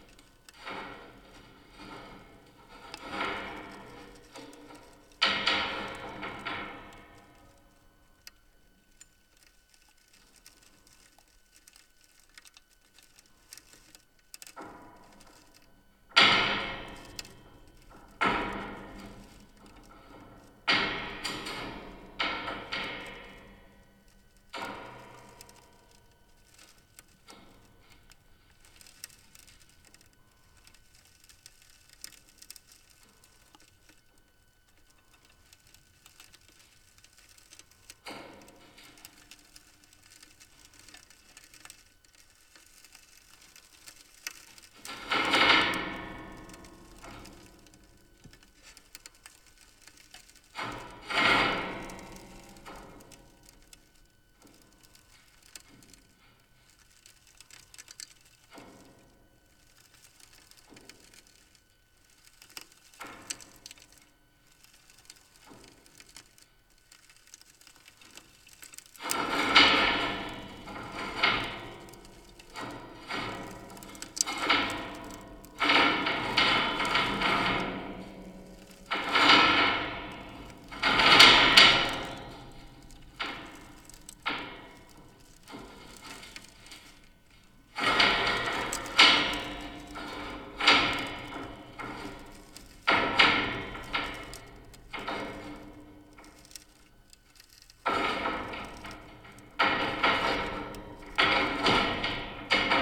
Polesie, Łódź Kaliska, Polska - lightning rod water tower
zoom h4n, contact microphone, Field recording of the urban ecology collaborative project with John Grzinich organized by the Museum of Art in Lodz